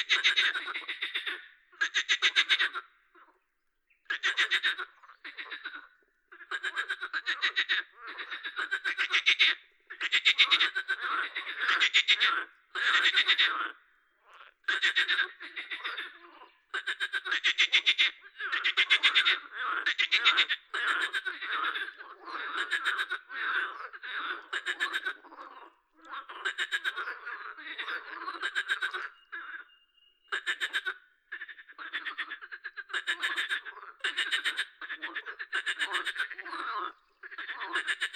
10/05/1998 vers 22H00 Marais de Lavours
Tascam DAP-1 Micro Télingua, Samplitude 5.1